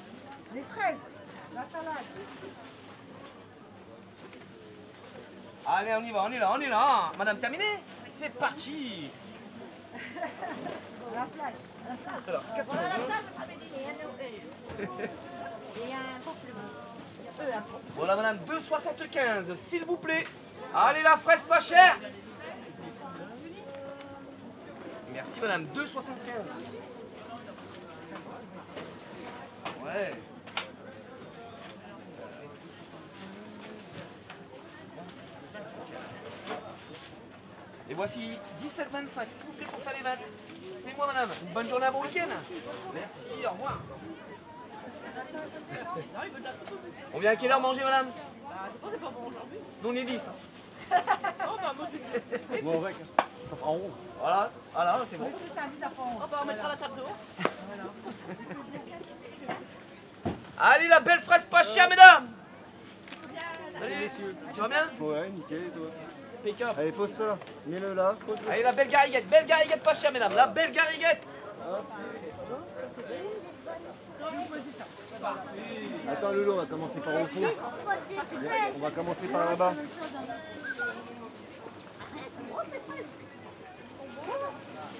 Pl. du Maréchal Foch, Saint-Omer, France - Marché de St-Omer
St-Omer
Ambiance du marché du samedi matin
les fruits et légumes.
France métropolitaine, France, 26 March 2022